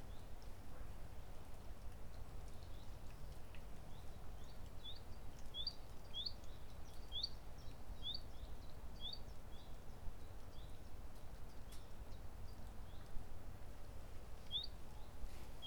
Lobosstraat, Halen, Belgium - Warme Lente - Frogs
Afternoon walk in the woods of Zelem on a sunny day in April.
You can hear frogs, birds, mosquitos and the wind.
Recorded with Zoom H1
22 April 2019